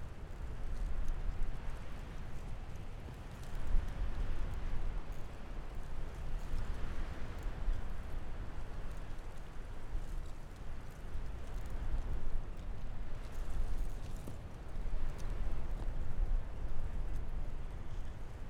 Mospalomas dunes, Gran Canaria, wind in brushes
San Bartolomé de Tirajana, Las Palmas, Spain, 29 January